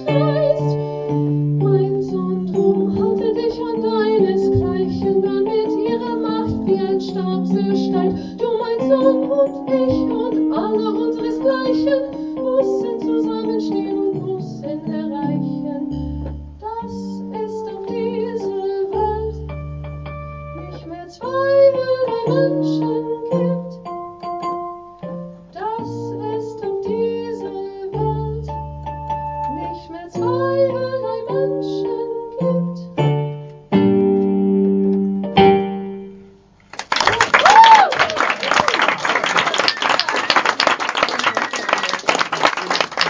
Composed by Hanns Eisler on the lyrics of Bertolt Brecht, the Wiegenlieder were first sung in 1932. Here we hear them again and stand in awe as singer JESS GADANI is giving her interpretation. The summer was odd, yet, the season of musical harvest starts promising.
11 September 2010, 20:33